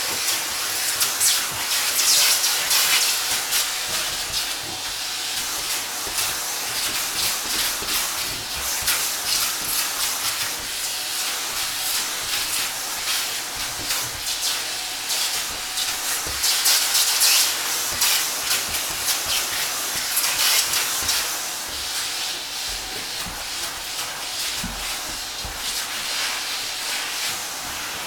Poznan, Mateckiego street, living room - sofa and vacuum cleaner
vacuuming the sofa, the nozzle makes great swishing, space sounds.